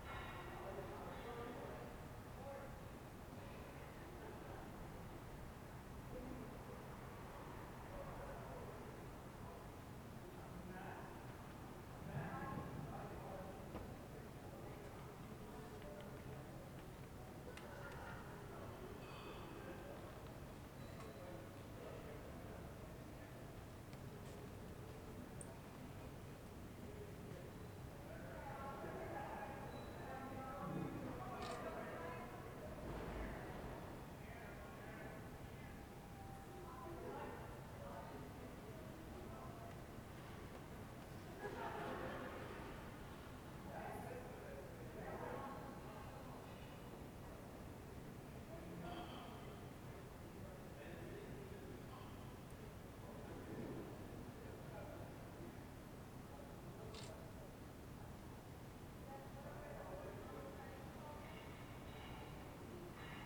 Ascolto il tuo cuore, città. I listen to your heart, city. Several chapters **SCROLL DOWN FOR ALL RECORDINGS** - Stille Nacht with howling wolves in the time of COVID19: soundscape.
"Stille Nacht with howling wolves in the time of COVID19": soundscape.
Chapter CXLVIX of Ascolto il tuo cuore, città. I listen to your heart, city
Thursday December 24th 2020. Fixed position on an internal terrace at San Salvario district Turin, about six weeks of new restrictive disposition due to the epidemic of COVID19.
Start at 11:47 p.m. end at 00:17 a.m. duration of recording 29’52”